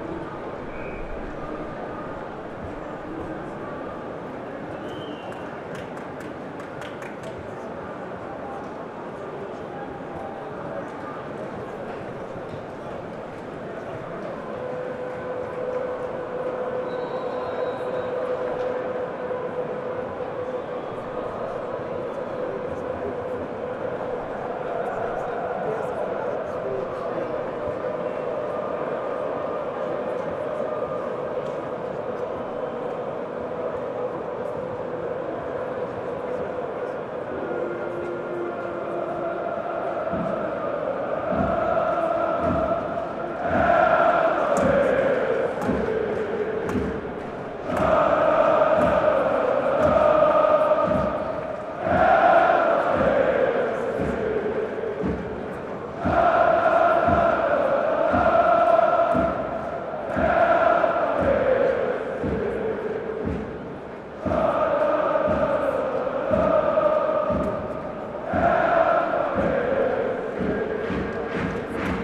berlin: olympiastadion - the city, the country & me: olympic stadium, football match, hertha fan chants
football league first division match between hertha bsc berlin and fc köln (cologne), begin of the second half, hertha fan chants. the match ended 0:0.
the city, the country & me: april 18, 2015
Berlin, Germany, 18 April 2015